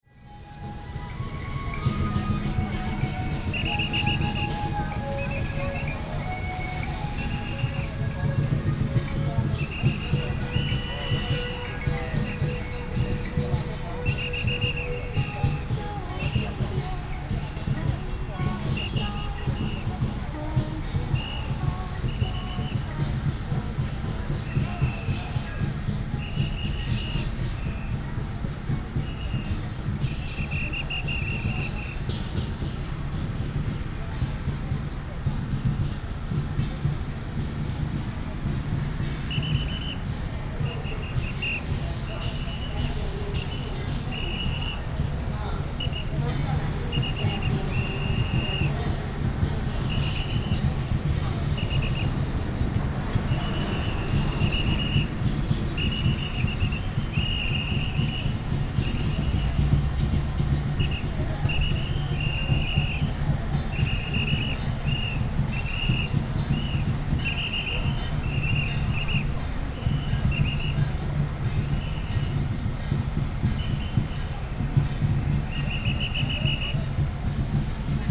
Matsu Pilgrimage Procession, Traffic sound, A lot of people, Directing traffic, Whistle sound, Gongs and drums